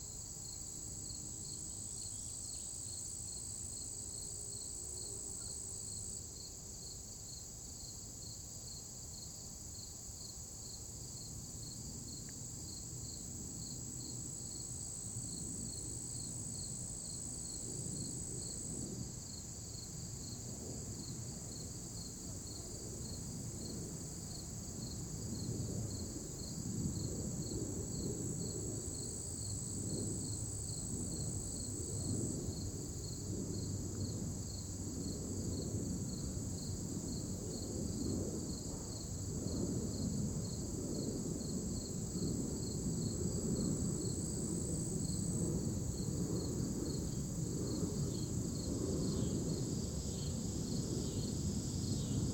Queeny Park Fox Run Trail, St. Louis, Missouri, USA - Queeny Fox Run Trail
Missouri, United States, August 2022